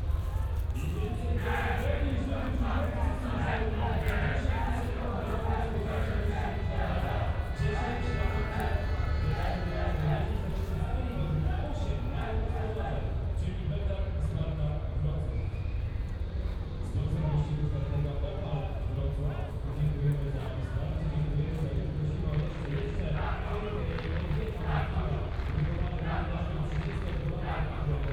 (binaural). one round of speedway race. fans cheering, racers being introduced, roar of the engines, announcer summarizes the race. (sony d50 + luhd PM-01Bins)